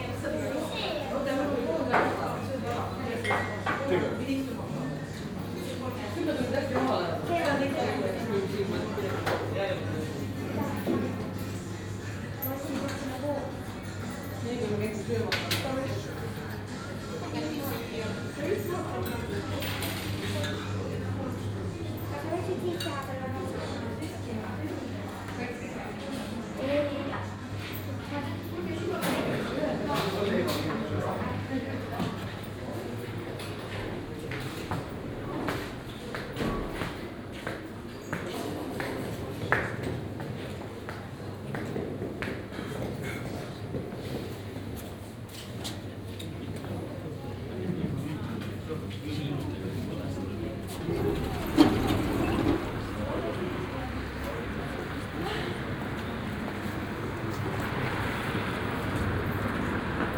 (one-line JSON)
{"title": "Tallinn bus terminal", "date": "2011-04-22 11:05:00", "description": "easter weekend, busy bus terminal, long distance busses start here", "latitude": "59.43", "longitude": "24.77", "altitude": "24", "timezone": "Europe/Tallinn"}